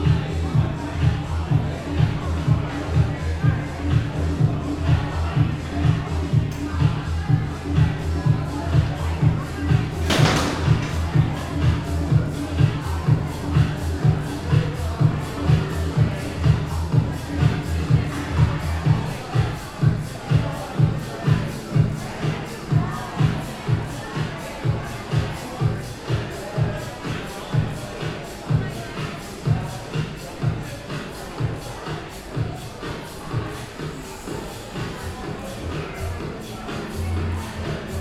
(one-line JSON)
{"title": "neoscenes: Luna Park disco security", "latitude": "-33.85", "longitude": "151.21", "altitude": "23", "timezone": "Australia/NSW"}